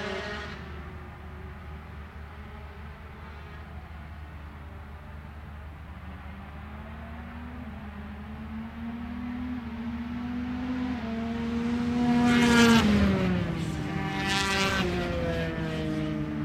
Donington Park Circuit, Derby, United Kingdom - British Motorcycle Grand Prix 2002 ... 125 ...
British Motorcycle Grand Prix 2002 ... 125 free practice ... one point stereo mic to minidisk ...